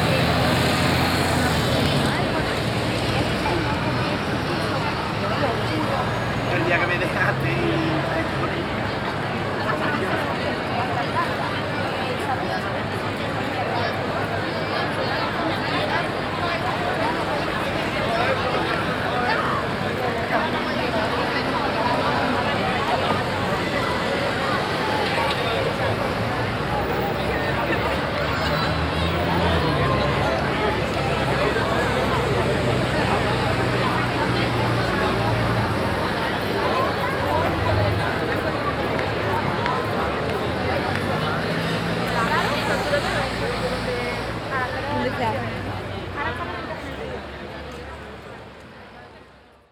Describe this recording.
At the Plaza de la Encarnation in the evening. The souns atmospher of people sitting and talking on the stairs of the architecture. international city sounds - topographic field recordings and social ambiences